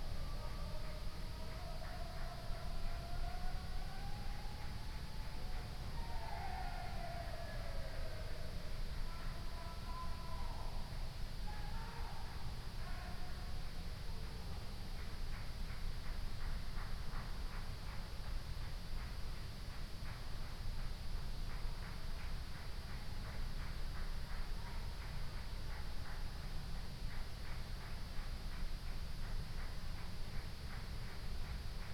Ascolto il tuo cuore, città. I listen to your heart, city. Several chapters **SCROLL DOWN FOR ALL RECORDINGS** - Stille Nacht Dicembre 2020 in the time of COVID19: soundscape.
"Stille_Nacht_Dicembre_2020 in the time of COVID19": soundscape.
Chapter CXLVI of Ascolto il tuo cuore, città. I listen to your heart, city
Monday, December 14th 2020. Fixed position on an internal terrace at San Salvario district Turin, more then five weeks of new restrictive disposition due to the epidemic of COVID19.
Four recording of about 6’ separated by 7” silence; recorded between at 11:07 p.m. at 23:46 p.m. duration of recording 24’20”
Piemonte, Italia, 14 December